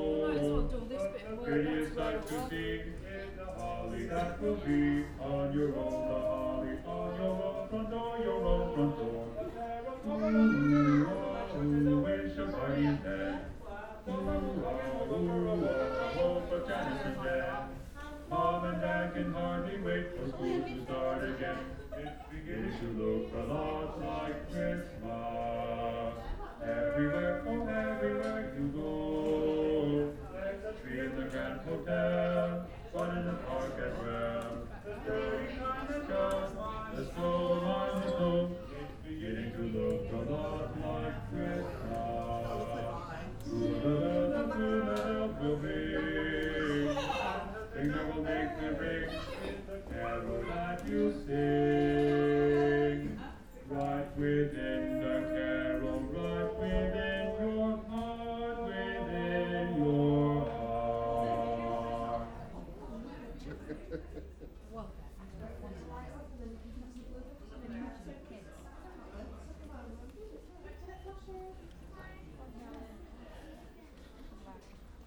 Newfield Dr, Garforth, Leeds, UK - three carol singing reindeer ... and a fire alarm ...
three carol singing reindeer ... and a fire alarm ... animatronic reindeer singing carols greeting customers at the entrance to a store ... then the fire alarm goes off ... lavalier mics clipped to bag ... background noise ... voices ... sliding door ...